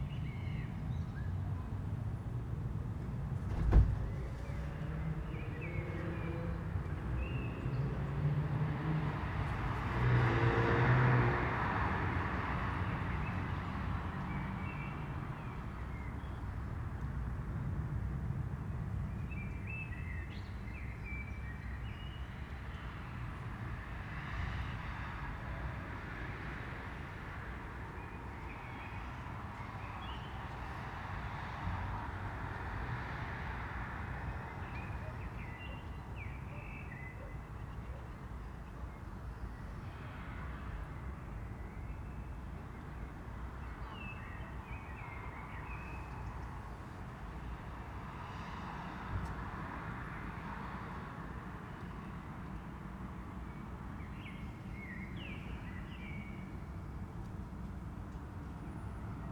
Kleine Campestraße, Braunschweig, Deutschland - Balcony 4thfloor
sound of the city at a balcony on the 4th floor, recorded with a pair of UsiPro and SD702
Braunschweig, Germany, 16 April 2019